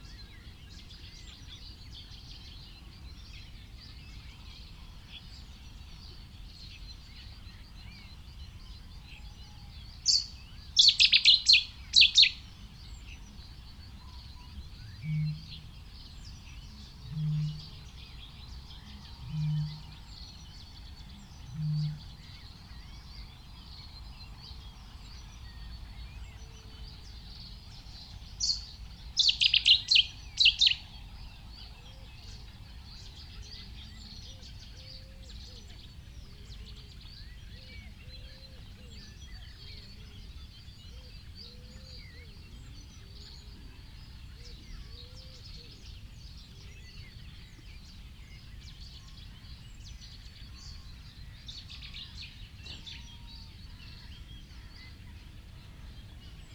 London Drove, United Kingdom - cettis warbler soundscape ...
cetti's warbler soundscape ... pre-amped mics in a SASS to Olympus LS 14 ... bird calls ... song ... from ... reed bunting ... bittern ... cuckoo ... reed warbler ... blackbird ... wren ... crow ... some background noise ...